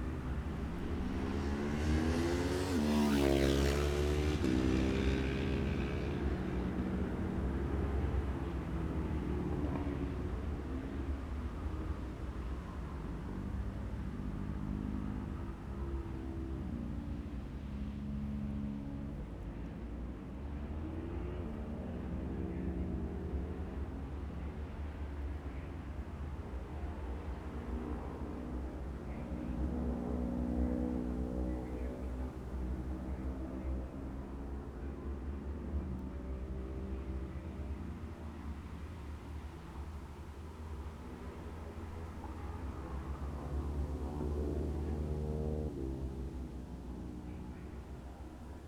Gold Cup 2020 ... Twins practice ... dpas bag MixPre3 ...